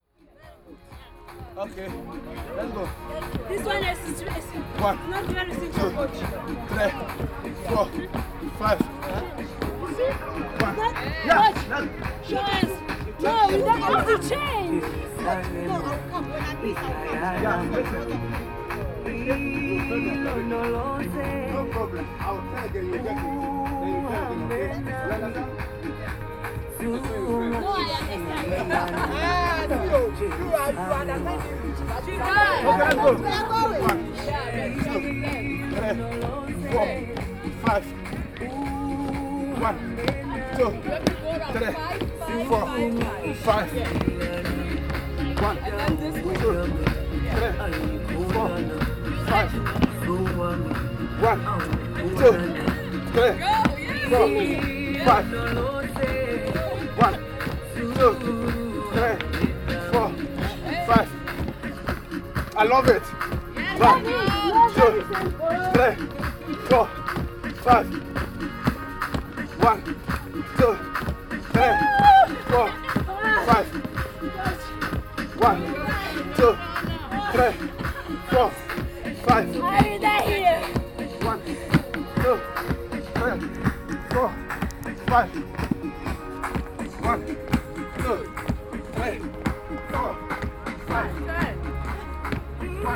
During afternoons in September, I found Hoersch Park in Dortmund peopled with community groups, young and old, doing their various exercises in the sports ground. This group caught my attention with an unfamiliar exercise of jumping on steps. A young sports man from Ghana called Thomas K Harry decided to dedicate his skills and experience to the well-being of the community free of charge.
you may also listen to an interview with Thomas and member of the group here:
Regierungsbezirk Arnsberg, Deutschland, 16 September 2020